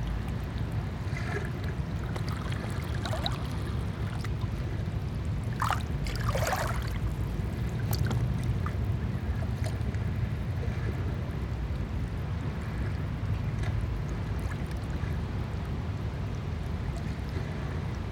Beach for Dogs, Gdańsk, Poland - (845 AB MKH) Calm waves at the beach for dogs
Recording of waves at the beach. This has been done simultaneously on two pairs of microphones: MKH 8020 and DPA 4560.
This one is recorded with a pair of Sennheiser MKH 8020, 17cm AB, on Sound Devices MixPre-6 II.